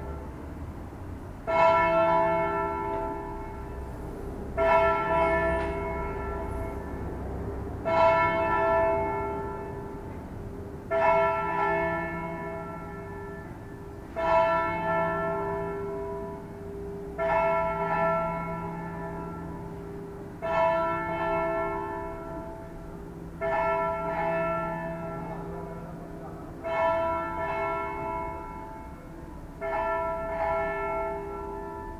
Darker outside, the barks of the dog gets louder...
Pavia, Italy, 23 October 2012